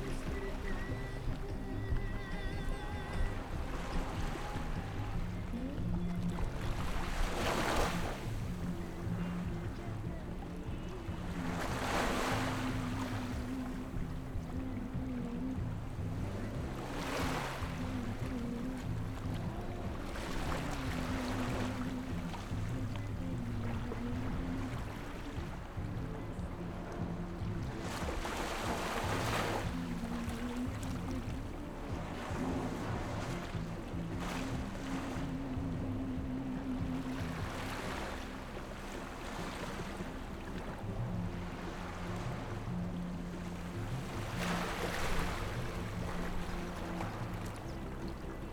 at the beach, next to Fishing port
Zoom H6 +Rode NT4